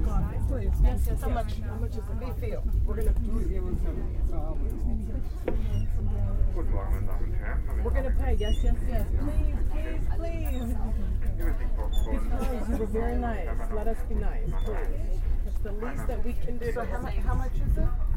{"title": "inside train leaving cologne", "description": "train heading to frankfurt. recorded june 6, 2008. - project: \"hasenbrot - a private sound diary\"", "latitude": "50.94", "longitude": "6.97", "altitude": "37", "timezone": "GMT+1"}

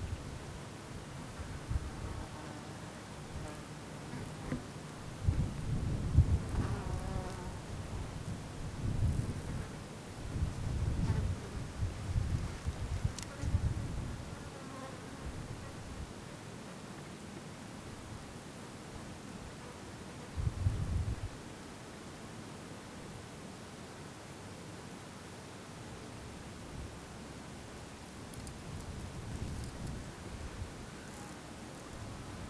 {"title": "Knockfennell, Co. Limerick, Ireland - Knockfennel summit", "date": "2013-07-18 15:15:00", "description": "We finally made it all the way up to the summit of Knockfennel. Here, mainly wind and buzzing insects can be heard. Some birds can be heard in a distance, below the hill. The visual view and the acouscenic soundscape is breathtaking.", "latitude": "52.52", "longitude": "-8.53", "altitude": "147", "timezone": "Europe/Dublin"}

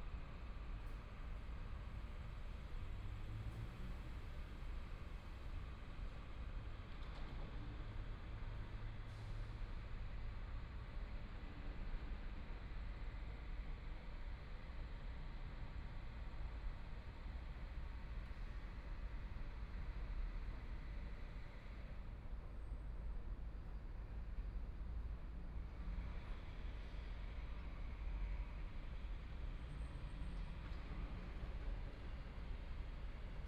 {"title": "LiaoNing Park, Taipei City - in the Park", "date": "2014-02-08 14:04:00", "description": "in the Park, Cloudy day, Clammy, Distant construction noise, Traffic Sound, Motorcycle Sound, Birds singing, Binaural recordings, Zoom H4n+ Soundman OKM II", "latitude": "25.05", "longitude": "121.54", "timezone": "Asia/Taipei"}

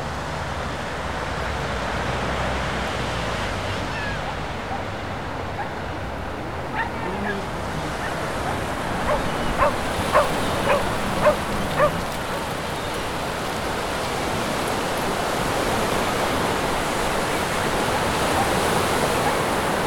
{"title": "City of Sunderland - The City of Sunderland", "date": "2014-07-26 12:00:00", "description": "A short, general day-in-the-life summary of a bustling, excited, active afternoon in and around the City of Sunderland.", "latitude": "54.91", "longitude": "-1.38", "altitude": "33", "timezone": "Europe/London"}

